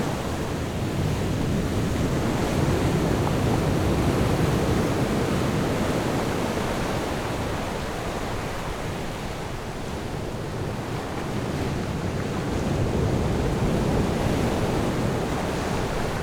Jizazalay, Ponso no Tao - sound of the waves
sound of the waves
Zoom H6 + Rode NT4